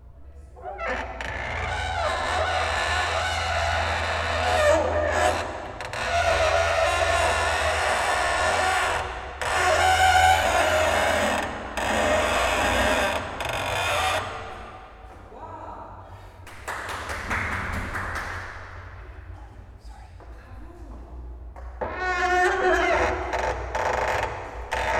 Lxfactory-arthobler, Lisbon, moving piano
Lisbon, Portugal, 6 October 2010, 23:55